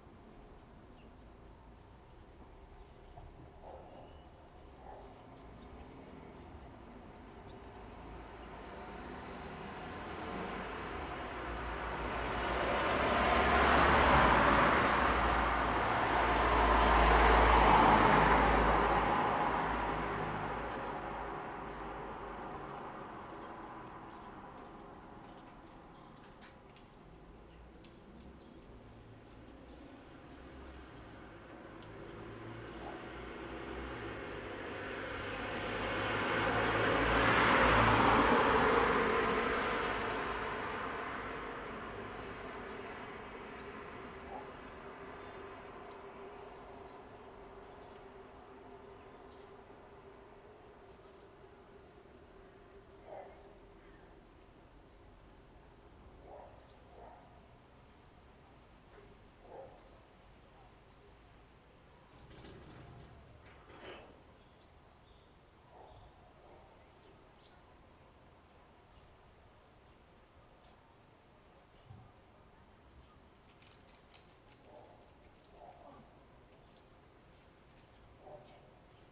A typical day in the neighourhood. Cars passing by, pedestrians walking, dogs barking... Recorded with Zoom H2n (MS, on a tripod).
Koprivničko-križevačka županija, Hrvatska, October 2020